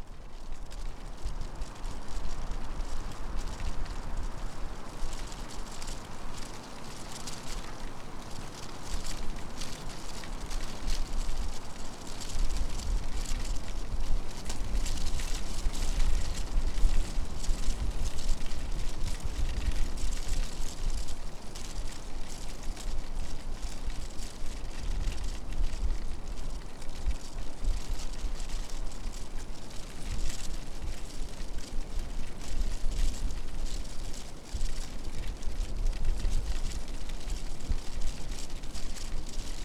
Lithuania, Utena, dried leaves
dried leaves in a strong wind